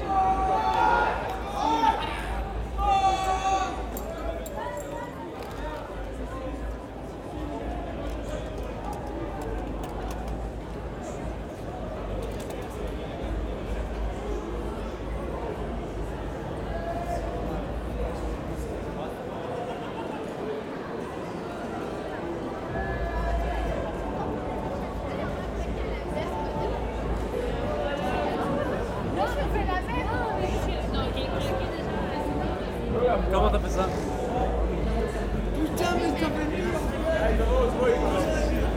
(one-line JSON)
{"title": "Ottignies-Louvain-la-Neuve, Belgique - 24 Hours bikes feast", "date": "2018-10-24 21:45:00", "description": "(en) Each year in Louvain-La-Neuve city happens a festival called the 24-hours-bikes. It’s a cycling race and a parade of folk floats. But above all, this is what is called in Belgian patois a “guindaille”. Quite simply, it's a student’s celebration and really, it’s a gigantic feast. In fact, it’s the biggest drinking establishment after the beer feast in Munich. Forty thousand students meet in aim to feast on the streets of this pedestrian city. It's a gigantic orgy encompassing drunkenness, lust and debauchery. People are pissing from the balconies and at every street corner. There’s abundance of excess. During a walk in these streets gone crazy, this is the sound of the event. It’s more or less an abnormal soundscape.\n(fr) Chaque année a lieu à Louvain-La-Neuve une festivité nommée les 24 heures vélo. Il s’agit d’une course cycliste et un défilé de chars folkloriques. Mais surtout, c’est ce qu’on appelle en patois belge une guindaille. Tout simplement, c’est une festivité étudiante.", "latitude": "50.67", "longitude": "4.61", "altitude": "115", "timezone": "Europe/Brussels"}